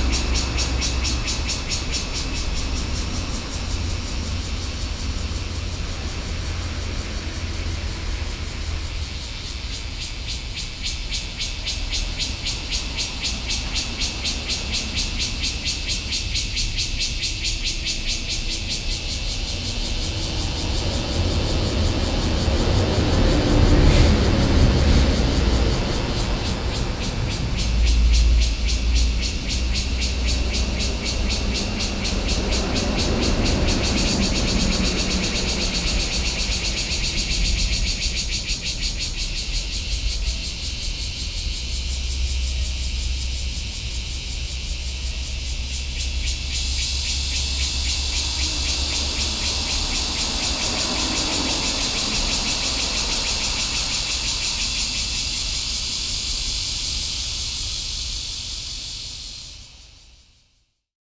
In the square in front of the temple, Cicadas cry, Aircraft flying through
Sony PCM D50+ Soundman OKM II
New Taipei City, Bali District, 西部濱海公路278號, 4 July 2012, ~13:00